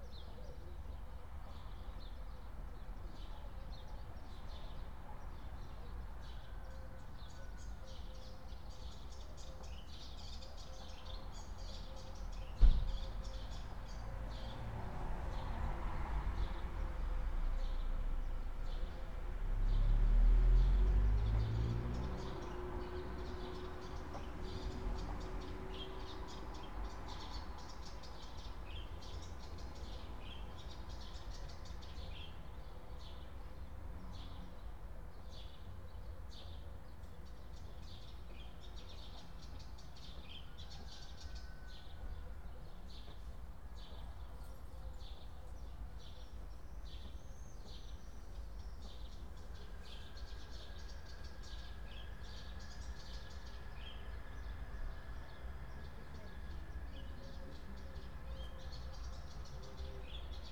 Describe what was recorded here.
every morning aproximatelly at 7:00 we hear the church bells ringing